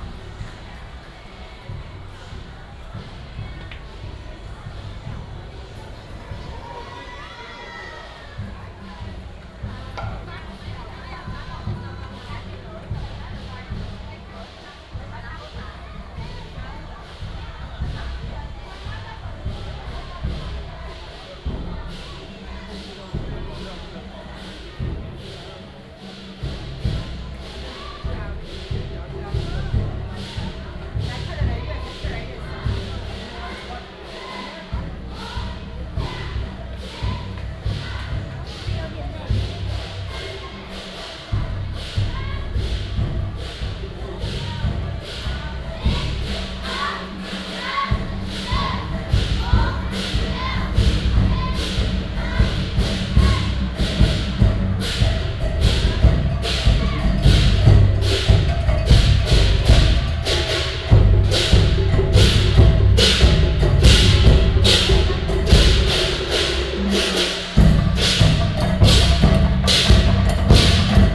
Festivals, Walking on the road, Variety show, Keelung Mid.Summer Ghost Festival
16 August, 20:20